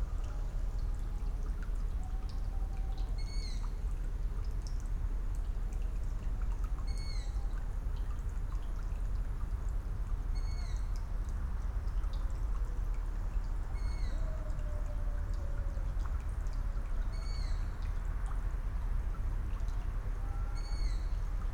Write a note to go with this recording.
midnight at the cemetery Friedhof Columbiadamm, an teenage owl (Asio otus, Waldohreule) is calling, water dripping from a leaking tap, sounds of a remote party in Hasenheide park, the always present city drone, (Sony PCM D50, Primo EM172)